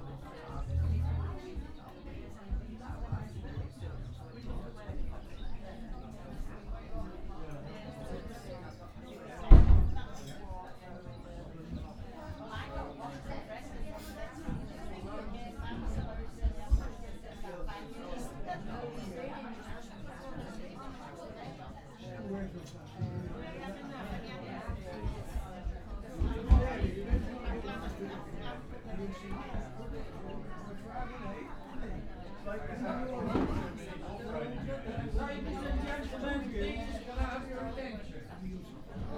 Post Office, Weaverthorpe, Malton, UK - platinum jubilee celebrations in a village hall ...
platinum jubilee celebrations in a village hall ... weaverthorpe ... binaural dummy head with luhd in ear mics to zoom h5 ... displays refreshments ... a ukulele band ...
Yorkshire and the Humber, England, United Kingdom, 5 June 2022